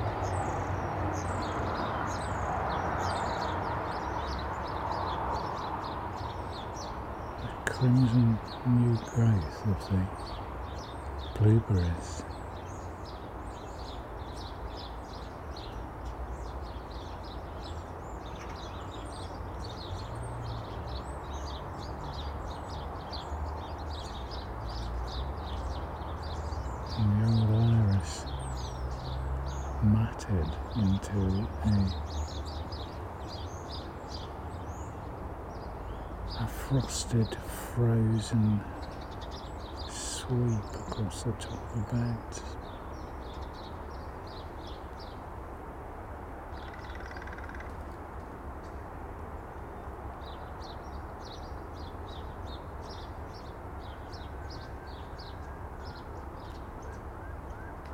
Contención Island Day 3 inner southeast - Walking to the sounds of Contención Island Day 3 Thursday January 7th
The Drive Moor Crescent Moorfield
Stand in the doorway of a shed
A flock of goldfinches flies
into the top of a roadside tree
then drift drop down onto one of the plots
England, United Kingdom, 7 January 2021